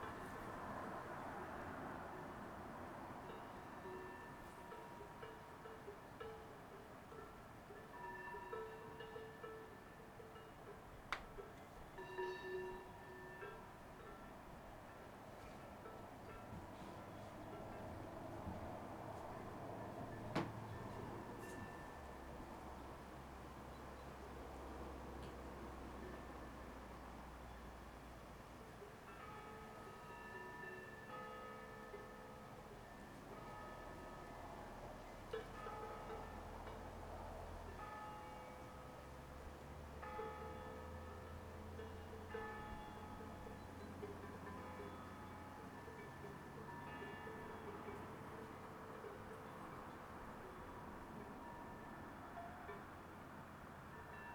Via Maestra, Rorà TO, Italia - Stone Oven House August 29&30 2020 artistic event 3 of 3
Music and contemporary arts at Stone Oven House, Rorà, Italy, Set 3 of 3
One little show. Two big artists: Alessandro Sciaraffa and Daniele Galliano. 29 August.
Set 3 of 3: Saturday, August 30th, h.9:00 a.m.
Provincia di Torino, Piemonte, Italia, 30 August 2020